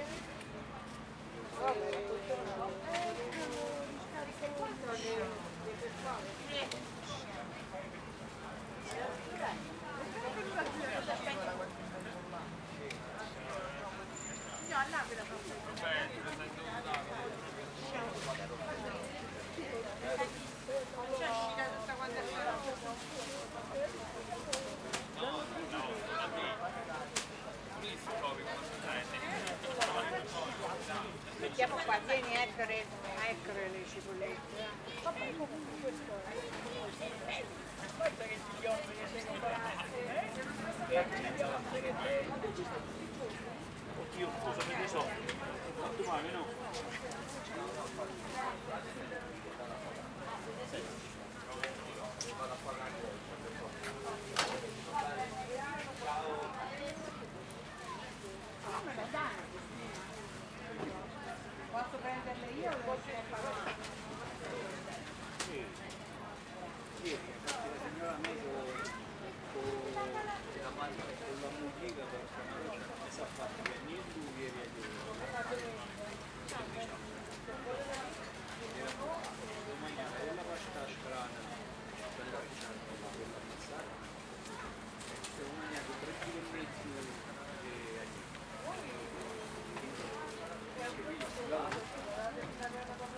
L'Aquila, Piazza d'Armi - 2017-05-22 01-Mercato pzza d'Armi
Area adibita a mercato giornaliero dopo il terremoto del 2009. In precedenza era un’area militare adibita ad esercitazioni per automezzi militari.
L'Aquila AQ, Italy, 22 May 2017